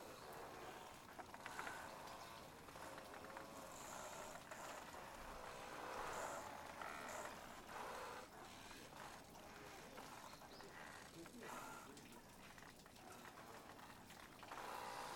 Miyaji Motomachi, Fukutsu, Fukuoka, Japan - Banners in Light Breeze at Miyajidake Shrine
An array of banners mounted on long bamboo canes turn in the breeze.